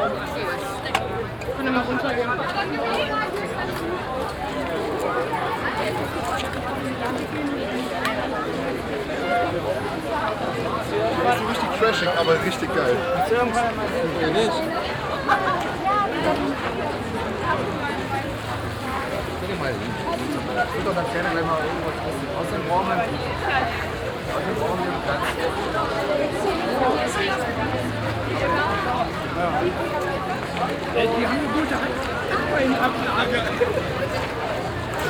Carlstadt, Düsseldorf, Deutschland - düsseldorf, rhine promenade, jpan day
Walking at the Rhine promenade during the annual Japan day. The sound of visitors and manga fans talking and passing by - at the end the sound of a public karaoke stage.
soundmap nrw - social ambiences and topographic field recordings